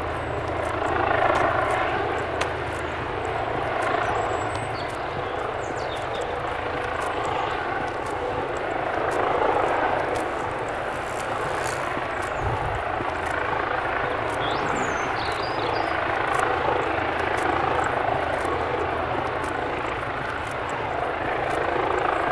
Bute Park, Cardiff - Walking Through Bute Park, Cardiff
Walking through Bute Park, Cardiff, 4.45pm, Saturday 26th March 2011. On my way back to the hotel I was staying at from RWCMD.
The Police helicopter is patrolling the area as the Wales versus England football European Championship qualifying match draws to a close at the nearby Millennium Stadium. (England won 2-0)...I have a squeaky bag over my shoulder!